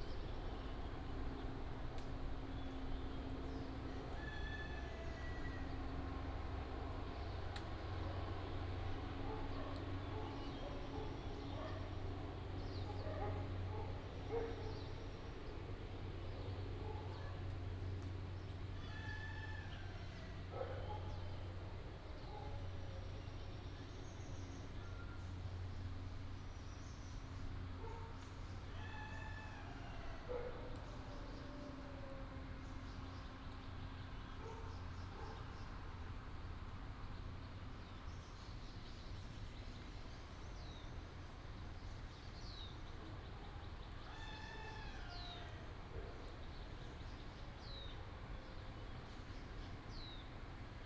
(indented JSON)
{
  "title": "水上巷, 南投縣埔里鎮桃米里 - in the morning",
  "date": "2016-03-24 06:25:00",
  "description": "in the morning, Chicken sounds, Chirp",
  "latitude": "23.94",
  "longitude": "120.92",
  "altitude": "494",
  "timezone": "Asia/Taipei"
}